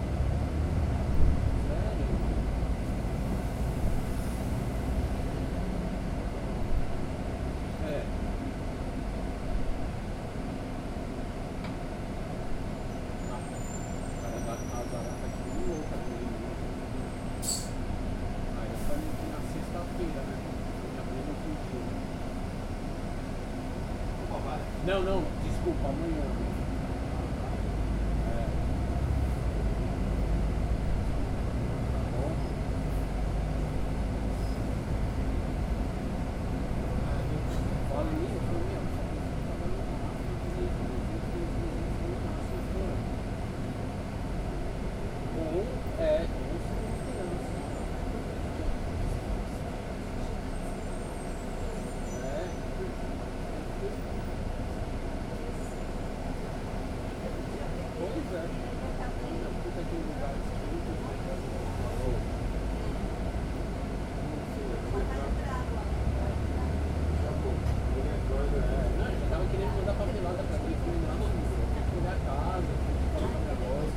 Paisagem sonora do interior de um ônibus que vai da Joaquim até a Av. Santo Amaro no horário de almoço.
São Paulo - SP, Brazil, 22 September 2018